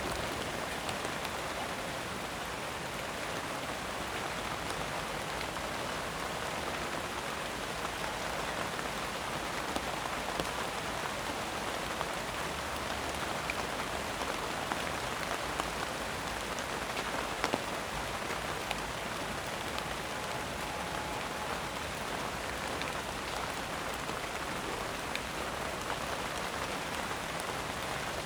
Steady rainfall recorded with the microphones on the ground sheltered under Japanese knotweed leaves. Two year ago there was a cherry tree is this Hinterhof. It provided much tasty fruit. Sadly it began to lean over and was cut down; almost the only act of gardening that has ever happened here. Now Japanese knotweed has taken over. Such an invasive plant.
Deutschland, 16 October 2019, 16:34